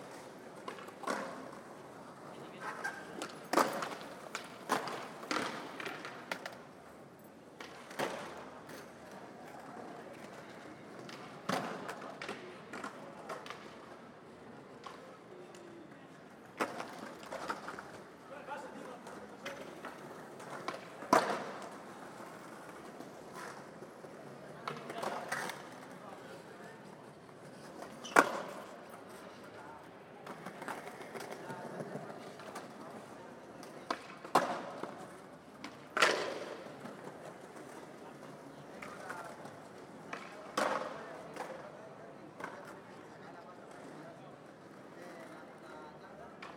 Carrer de Joaquín Costa, Barcelona, Espagne - Barcelone - MACBA -skaters
Barcelone - MACBA (Musée d'Art Contemporain de Barcelone)
L'esplanade du musée est un paradis (ou un enfer son leur niveau) des skaters espagnols.
Ambiance fin de journée.
ZOOM F3 + AKG 451B